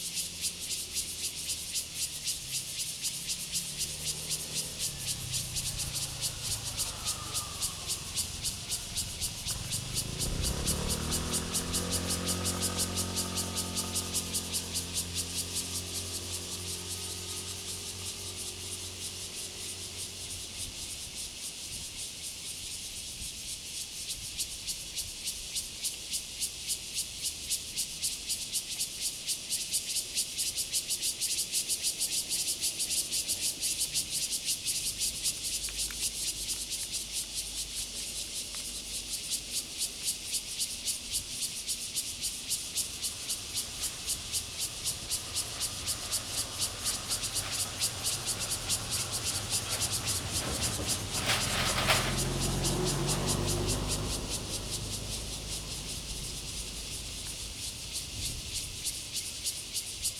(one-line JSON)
{"title": "長虹橋, Fengbin Township - Cicadas sound", "date": "2014-10-09 11:26:00", "description": "Cicadas sound, Traffic Sound\nZoom H2n MS +XY", "latitude": "23.47", "longitude": "121.49", "altitude": "36", "timezone": "Asia/Taipei"}